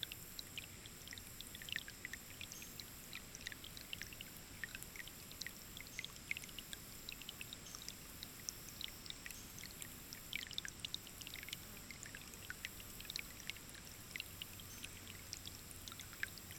Faris Caves, Kanopolis, Kansas - Outside the Caves
About six feet from the entrance to the center cave, a rivulet runs past. A fly buzzes nearby a couple of times. Birds, wind and cicadas can also be heard from outside. Stereo mics (Audiotalaia-Primo ECM 172), recorded via Olympus LS-10.
KS, USA, 3 September 2017